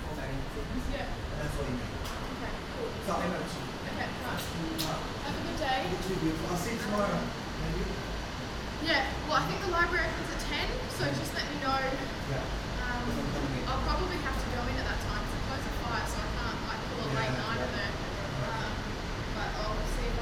Conversation around the entrance hall of the Cinema Pathé Buitenhof and Café Des Deux Villes.
Recorded as part of The Hague Sound City for State-X/Newforms 2010.